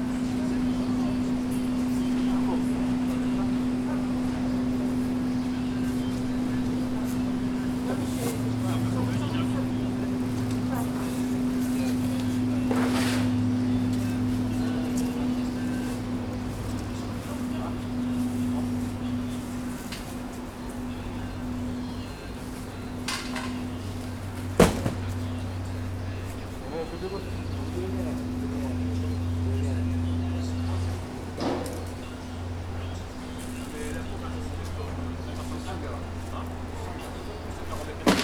Boulevard Jules Guesde, Saint-Denis, France - Opp. Église Saint-Denis-de-lEstrée
This recording is one of a series of recording, mapping the changing soundscape around St Denis (Recorded with the on-board microphones of a Tascam DR-40).